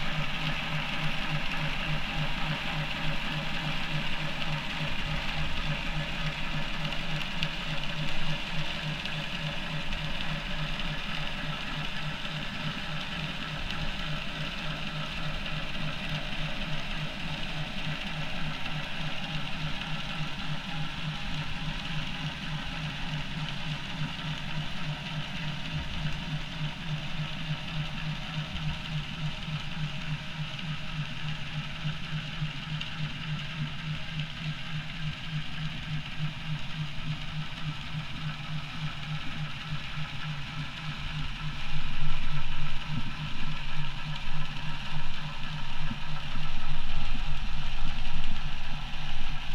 Braunschweiger Hafen, Mittellandkanal, Deutschland - Hafen Mittellandkanal
Braunschweiger Hafen, Mittellandkanal, Hydrophone, Lastschiff fährt vorbei.
Projekt: TiG - Theater im Glashaus: "über Land und Mehr - Berichte von einer Expedition zu den Grenzen des Bekannten". TiG - Theater im Glashaus macht sich 2013 auf zu Expeditionen in die Stadt, um das Fremde im Bekannten und das Bekannte im Fremden zu entdecken. TiG, seit 2001 Theater der Lebenshilfe Braunschweig, ist eine Gruppe von Künstlerinnen und Künstlern mit unterschiedlichen Kompetenzen, die professionell erarbeitete Theaterstücke, Performances, Musik und Videofilme entwickelt.